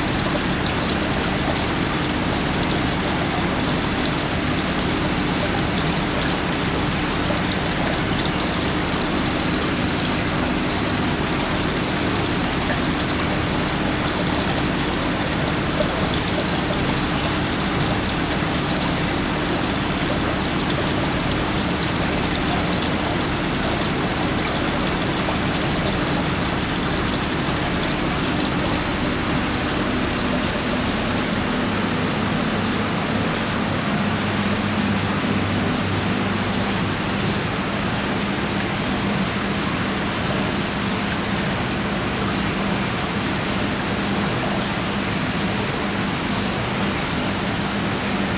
Heinerscheid, Luxemburg - Kalborn, Kalborn Mill, laboratory
An der historischen Kalborner Mühle, heute Forschungs- und Zuchtstation für Flussperlmuscheln, im Wasserbeckenlabor. Das Geräusch des Our Wassers in verschiedenen Wasserbecken, sowie Pumpen und Generatoren in einem Kellerlabor, das hier zur Aufzucht und Untersuchung der Muscheln installiert wurde.
At the historical mill of Kalborn that is nowadays a research and breeding station for fresh water pearl mussels. The sound of the Our water in different water basins and water pumps in a cellar laboratory that has been setup here to breed and research the mussels.
Luxembourg, 6 August 2012